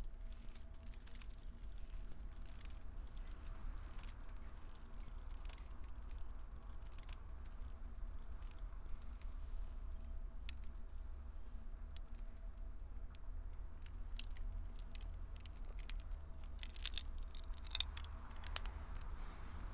sonopoetic path - broken maple tree
Maribor, Slovenia, 3 February